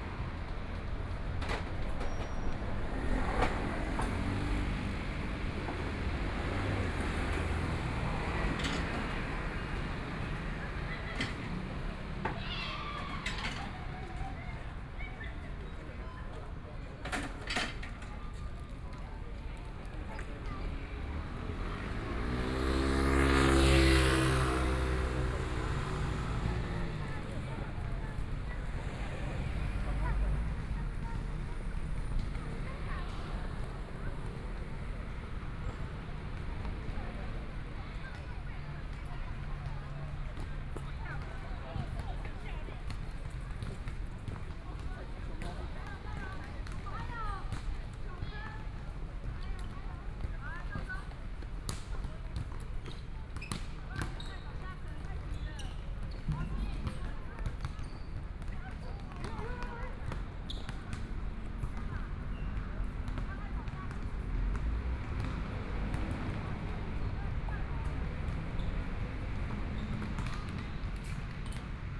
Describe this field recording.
Starting from convenience store, Out of the shop walked across, Binaural recordings